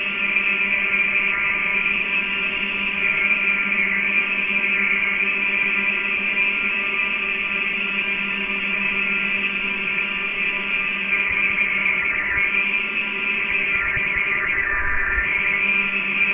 wind enery plant, Candal, Portugal, tower - windenergyTowerHydro
Hydrophone pressed on the tower of the machine.
Be careful with volume! The machine starts after 45 seconds!
19 July 2012, 16:50